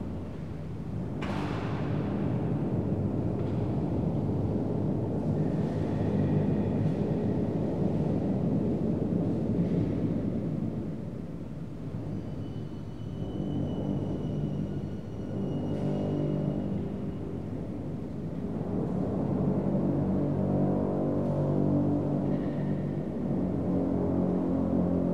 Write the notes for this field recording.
Roma, Basilica Sanctae Mariae supra Minervam. Roma, Basilica of Saint Mary Above Minerva. WLD - world listening day